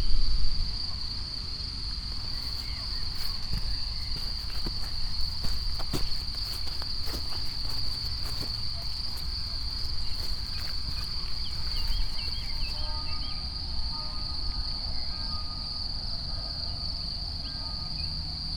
path of seasons, april meadow, piramida - wet grass